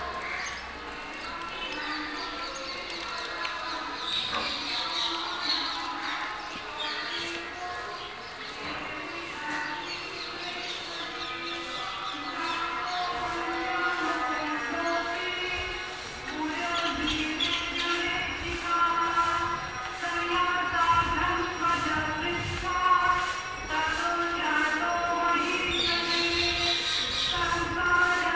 Parikrama Marg, Keshi Ghat, Vrindavan, Uttar Pradesh, Indien - at night in Vrindavan
a magical night in Vrindavan, staying on the roof of a small temple and enjoy listening ..recorded with a sony dat and early OKM mics.